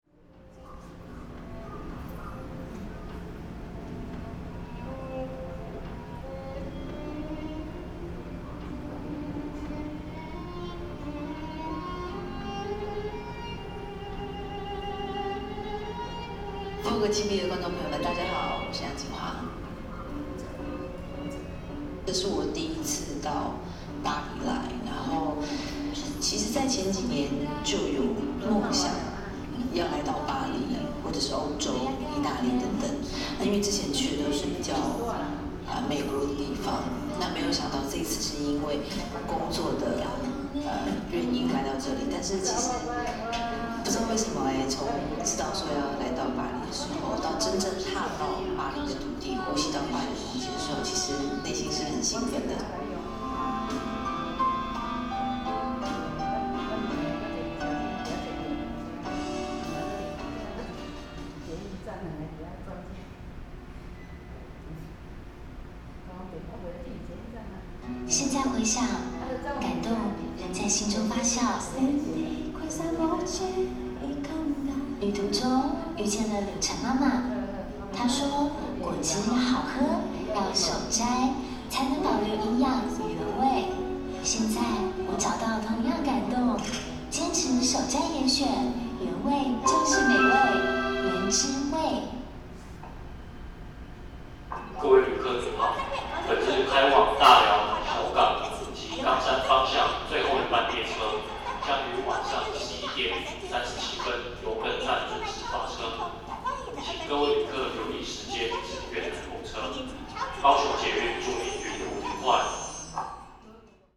{
  "title": "City Council Station - Station platforms",
  "date": "2012-04-05 23:16:00",
  "description": "Station platforms, Broadcasting and Woman talking sound, Sony PCM D50",
  "latitude": "22.63",
  "longitude": "120.30",
  "altitude": "11",
  "timezone": "Asia/Taipei"
}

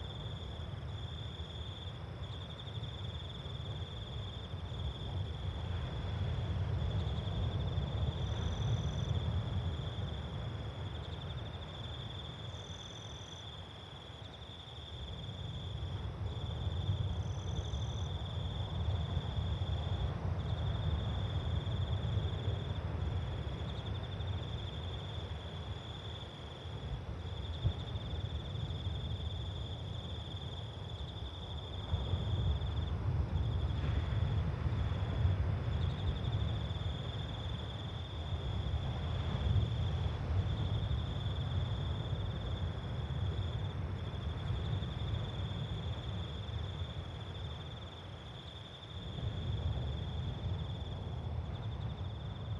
{
  "title": "Whiritoa Evening",
  "date": "2011-03-13 18:10:00",
  "description": "Of all my recordings at Whiritoa, this one reminds me the most of what it sounded like sitting on the deck of my friends beach house drinking a cool beer on a hot summers night..",
  "latitude": "-37.28",
  "longitude": "175.90",
  "altitude": "13",
  "timezone": "Pacific/Auckland"
}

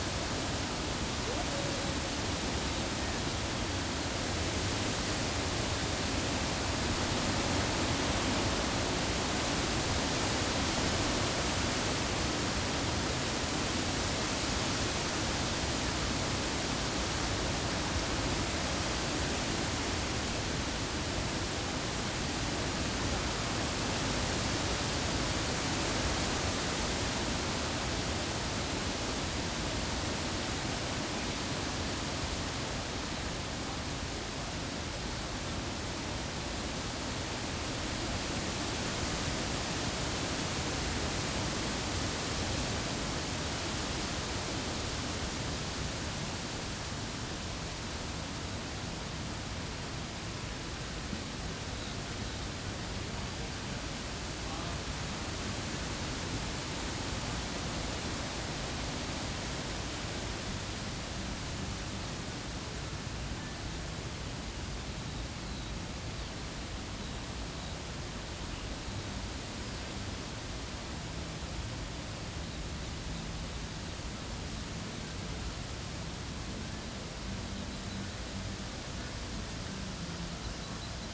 {
  "title": "Tempelhofer Feld, Berlin, Deutschland - wind in poplar trees",
  "date": "2012-06-17 11:15:00",
  "description": "a nice breeze in a group of poplar trees on Tempelhofer Feld.\n(tech: SD702 2xNT1a)",
  "latitude": "52.48",
  "longitude": "13.40",
  "altitude": "42",
  "timezone": "Europe/Berlin"
}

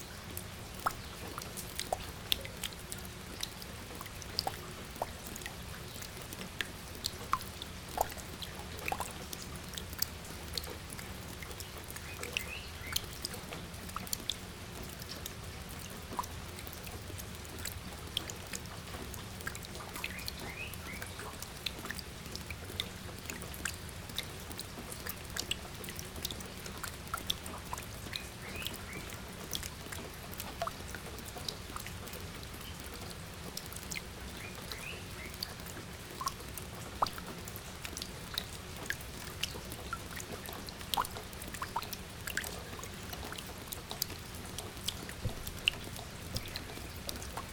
{
  "title": "Linden, Randburg, South Africa - Collecting Rain Water",
  "date": "2016-12-28 11:45:00",
  "description": "Rain water run-off dropping into a collection trough (plastic tub). Black-eyed bulbul calls. Zoom Q3HD in audio only mode. Internal mics.",
  "latitude": "-26.14",
  "longitude": "28.00",
  "altitude": "1624",
  "timezone": "GMT+1"
}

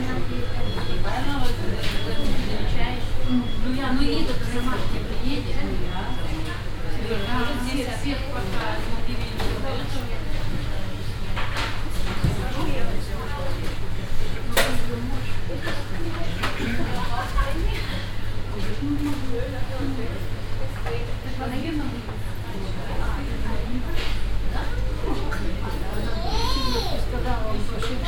{
  "title": "dresden, prager str, russian women in an american fast food restaurant",
  "date": "2009-06-17 12:17:00",
  "description": "a group of russian women meeting and talking after shopping in an american fast food restaurant\nsoundmap d: social ambiences/ listen to the people - in & outdoor nearfield recordings",
  "latitude": "51.04",
  "longitude": "13.74",
  "altitude": "116",
  "timezone": "Europe/Berlin"
}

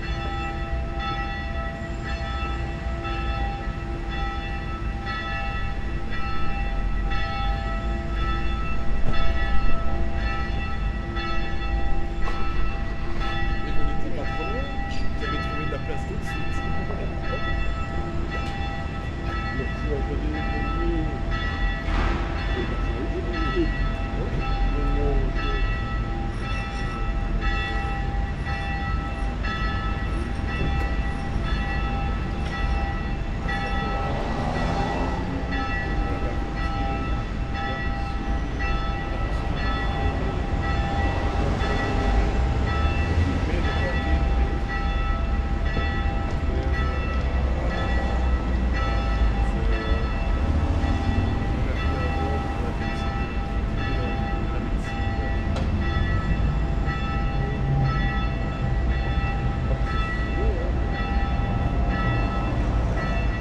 {
  "date": "2011-06-01 11:00:00",
  "description": "Brussels, Place Brugmann - ND de lAnnonciation, funeral bells.\nSD-702, Rode NT4",
  "latitude": "50.82",
  "longitude": "4.35",
  "altitude": "94",
  "timezone": "Europe/Brussels"
}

